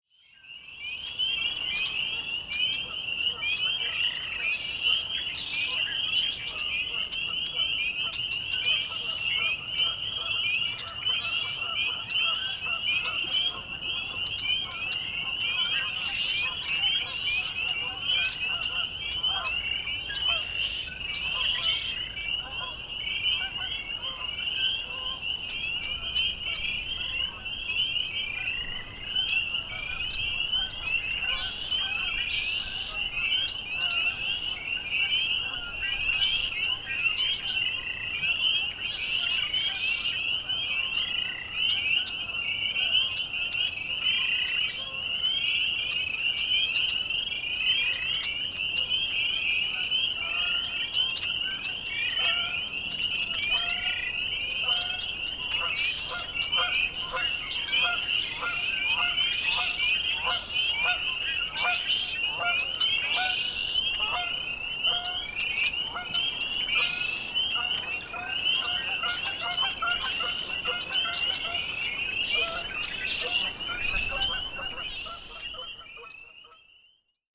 Crosby Township, OH, USA - Miami Whitewater Forest at dusk
Blackbirds, geese, frogs at the edge of a wetland at dusk.
18 February 2016, 18:20